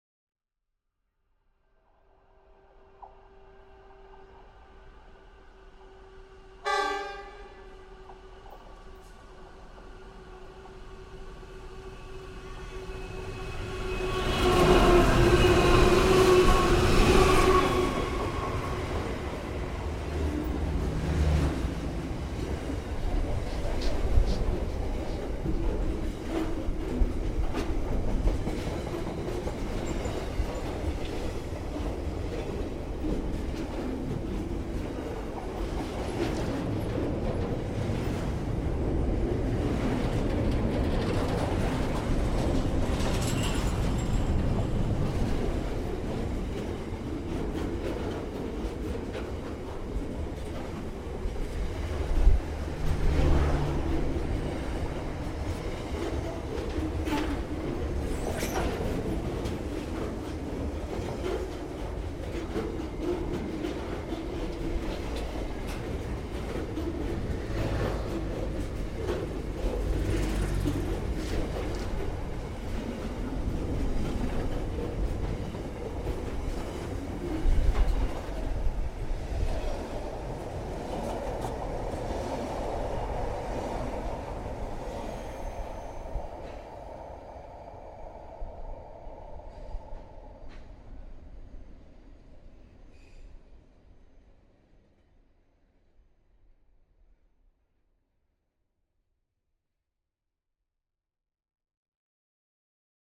Balhannah Railway Station - Balhannah Freight Train
Freight Train from Melbourne Victoria about one hour from Adelaide, South Australia
Recorded with two Schoeps CCM4Lg in ORTF configuration inside a Schoeps/Rycote stereo blimp directly into a Sound Devices 702 CF Recorder
Recorded at 10:50am on 19 March 2010